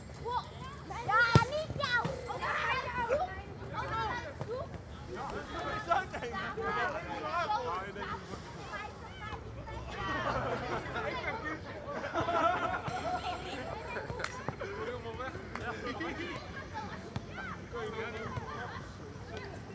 Schiemond, Rotterdam, Nederland - People playing soccer
People playing soccer on public sports fields in Schiehaven, Rotterdam.
Recorded with Zoom H2 internal mics.